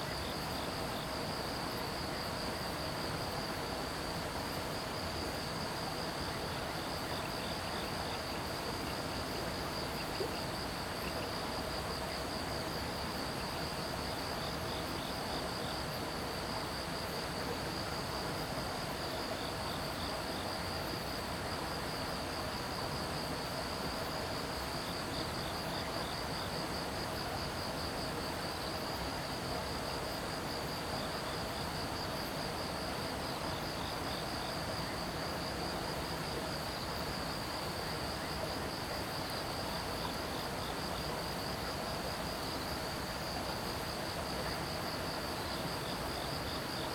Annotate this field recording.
Stream sound, Insects sounds, Zoom H2n MS+XY